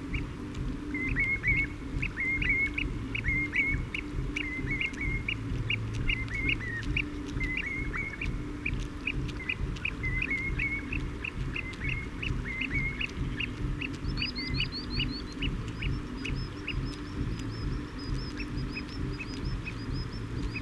Isle of Mull, UK - lochan soundscape with ceilidh ...
lochan soundscape with ceilidh on going in the background ... fixed parabolic to minidisk ... bird calls and song ... redshank ... common sandpiper ... tawny owl ... greylag ... oystercatcher ... curlew ... grey heron ... the redshank may be in cop ...
26 April